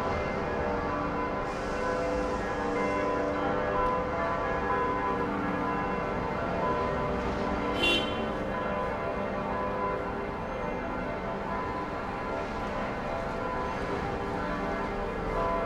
Valparaíso, Chile - church bells and car tyres
Sunday church bells and a typical sound of this corner: car horns and squeaking tyres, because of a very steep road with curves.
(Sony PCM D50)